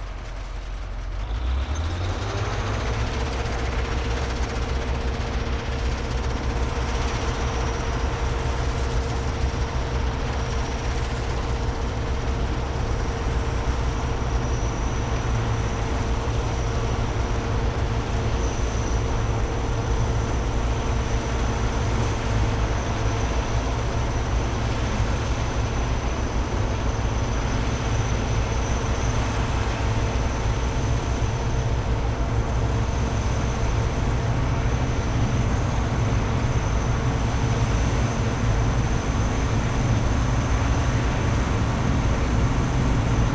SBG, El Pujol - Siega
En las dos primeras semanas de Julio tiene lugar la siega en prácticamente todos los campos de la zona. Aquí una segadora realizando su faena en el campo cercano a El Pujol. WLD
St Bartomeu del Grau, Spain